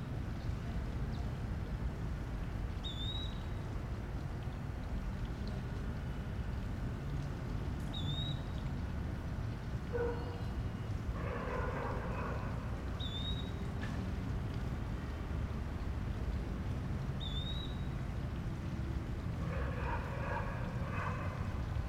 Dg. 2a Sur, Bogotá, Colombia - Conjunto residencial Banderas
This place is a residential complex located in the town of Kennedy, it is a stratum 3 neighborhood. This place has a calm atmosphere, with many birds around, a water fountain, cars and dogs barking and from time to time people talking.
This audio was recorded at 4 in the afternoon, using the shure vp88 microphone and a Focusrite 2i2 interface.